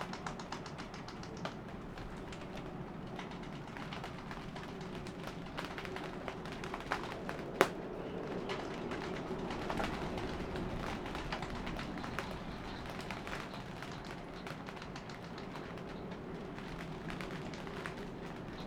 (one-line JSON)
{"title": "workum, het zool: marina, berth h - the city, the country & me: rainy morning", "date": "2015-06-23 10:13:00", "description": "rainy morning aboard\nthe city, the country & me: june 23, 2015", "latitude": "52.97", "longitude": "5.42", "altitude": "1", "timezone": "Europe/Amsterdam"}